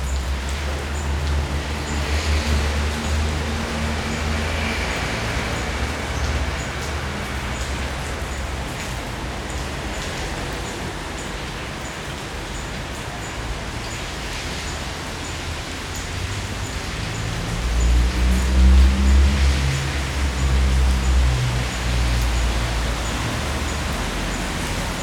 seeking shelter from the rain, i found myself in the covered entryway at the back of an apartment building, slightly away from the main road and it's wall of traffic noise. as the rain subsided a few birds began exclaiming their relief at it's end.
Maribor, Slovenia, August 2012